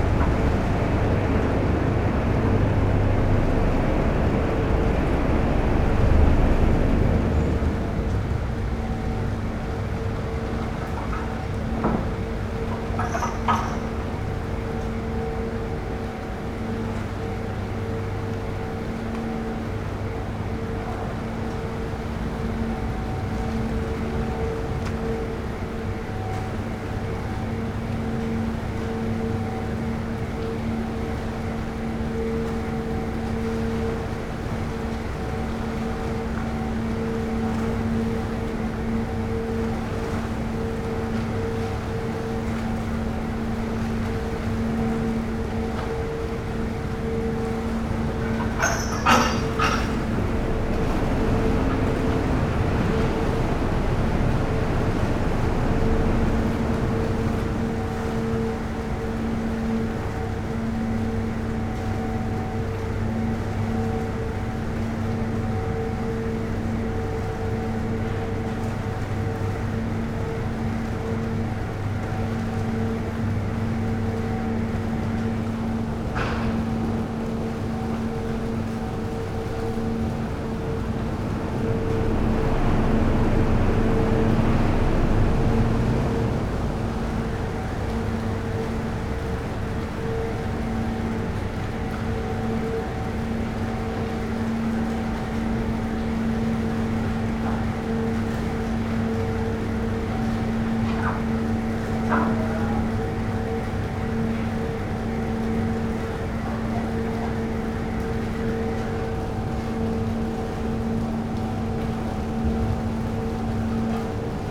lipari harbour - ferry arrives
NAVE car ferry boat arrives in harbour of lipari
Lipari ME, Italy, 19 October 2009, 11:30